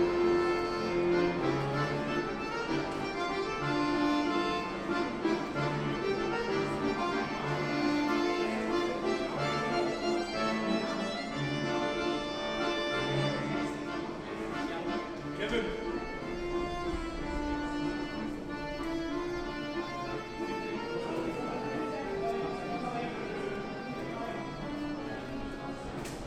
Mitte, Berlin, Deutschland - In the tunnel between the subways with accordionist
Im Tunnel zwischen den U-Bahnen mit Akkordeon-Spieler
Zwischen der U6 und der U2 gibt es einen langen niedrigen Tunnel: eine Gruppe von Jugendlichen, die sich lautstark unterhält. Am Ende des Tunnels ein Akkordeon Spieler - er beginnt mit Schostakowitschs Walzer Nr. 2 - Ich bleibe in seiner Nähe, befinde mich eine halbe Treppe über ihm. Menschen gehen die Treppen rauf und runter. Eine U-Bahn fährt ein und wieder ab. Ich entferne mich langsam vom Spieler und komme nochmal an der Gruppe der Jugendlichen vorbei.
Between the U6 and U2 there is a long, low tunnel: a group of young people who talks loudly. At the end of the tunnel an accordion player - he begins with Shostakovich's Waltz No. 2. I stay close to him, half-staircase above him. People walk the stairs up and down. The subway arrives and departs. I leave slowly the player. pass again by the group of young people.
Berlin, Germany, 17 September 2016